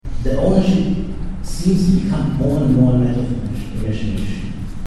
LIMINAL ZONES WORKSHOP, CYPRUS, Nikosia, 5-7 Nov 2008, Florian Schneider at his lecture "imagunary property"